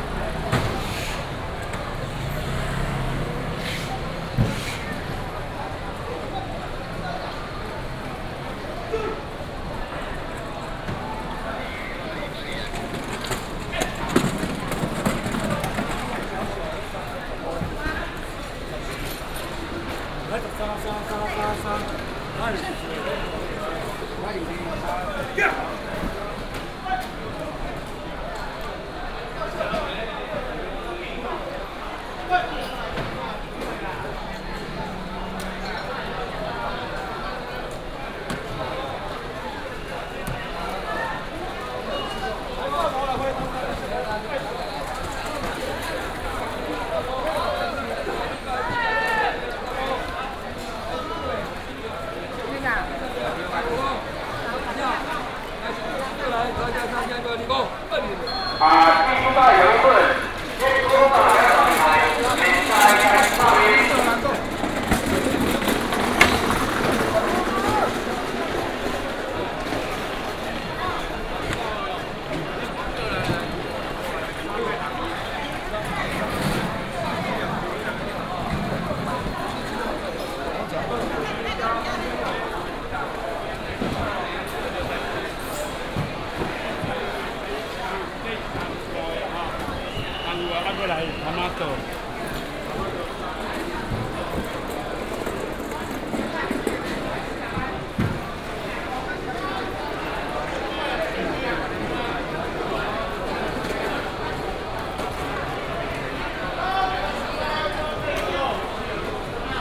台北第一果菜市場, Taipei City - Walking in the Vegetable wholesale market
Walking in the Vegetable wholesale market, Traffic sound
Wanhua District, 萬大路531號, 6 May 2017